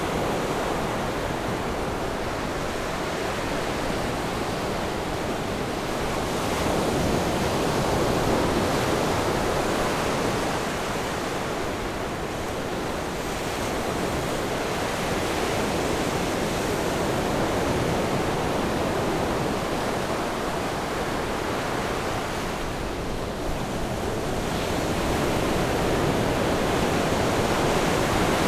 Sound of the waves, In the beach
Zoom H6 MS+ Rode NT4